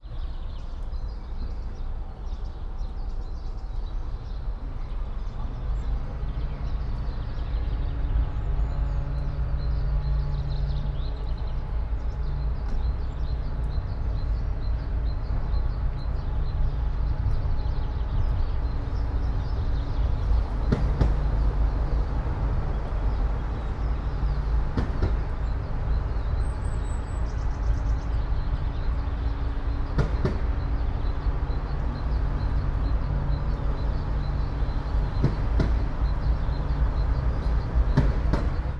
all the mornings of the ... - mar 5 2013 tue